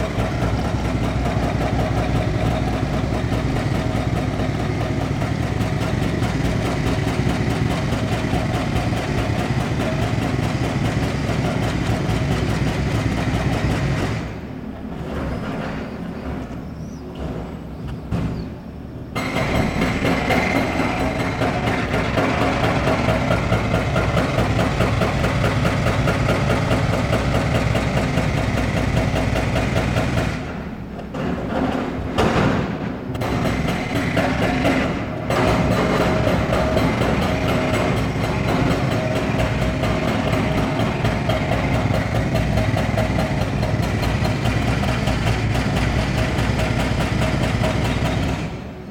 Ben Yehuda Street while demolishing a building from a balcony in the 3rd floor.
recorder by zoom f1. friday noon.
8 May, 12:00